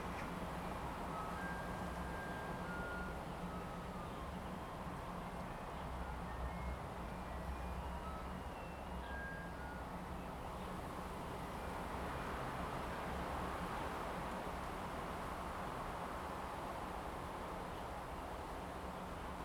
鐵漢堡, Lieyu Township - Wind and Birds
In the woods, Wind, Birds singing, Garbage truck distant sound of music, Abandoned military facilities
Zoom H2n MS +XY
福建省, Mainland - Taiwan Border